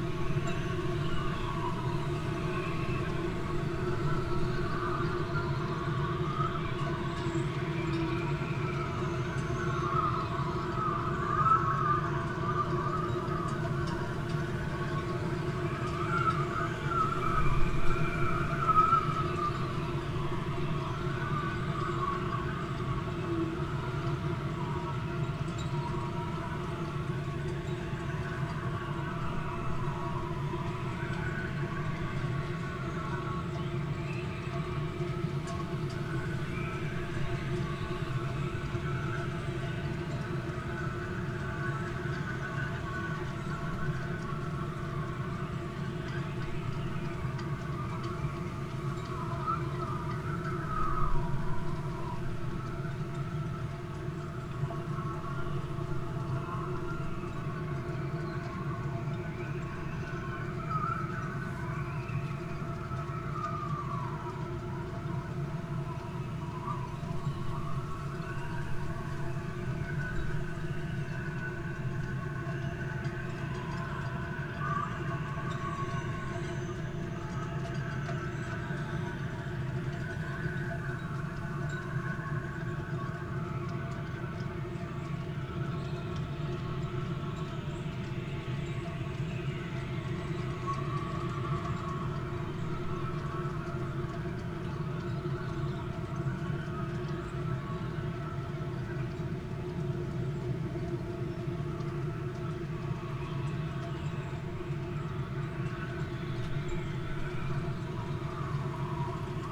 workum: marina - the city, the country & me: mic in metal box trolley
stormy night (force 5-7), mic in a metal box trolley
the city, the country & me: june 23, 2013